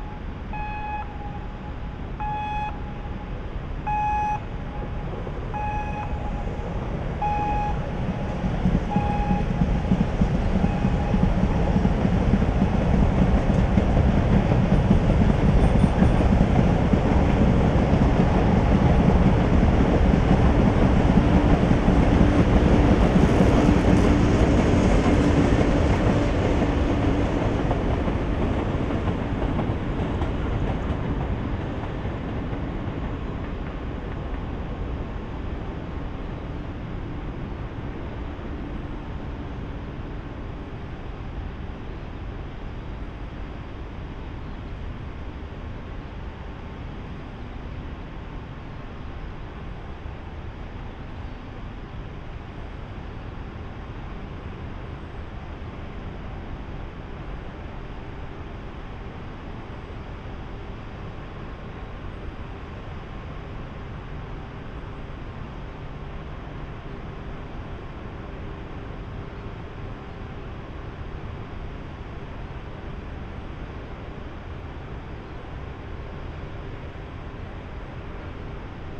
Neurath, Sinsteden - Neurath power station
ambience near blocks BoA 2 and 3 of the newly built Neurath power station. signal and sound of passing deavy duty coal train.
(tech: SD702, Audio Technica BP4025)